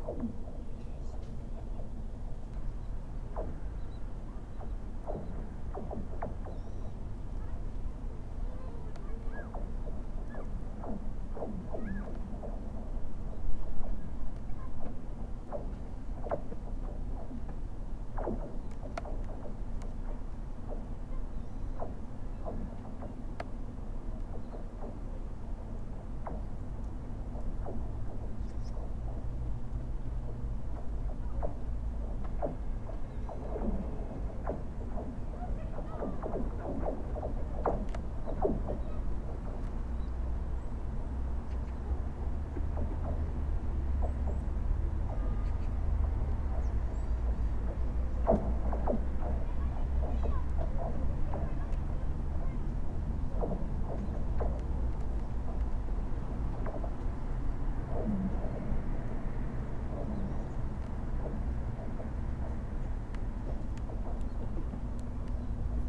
River ice
Ice covers the Seoksa river bank to bank at the river-mouth and starts to grow out into Chuncheon lake.